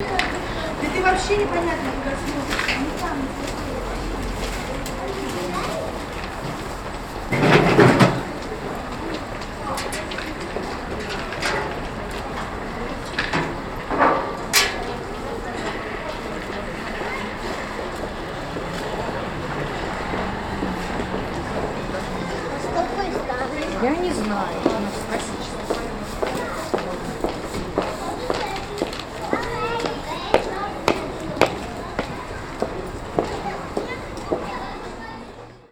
a man checking litterbin at Lasnamae shopping centre, Tallinn
litter bin, woman, kid
Tallinn, Estonia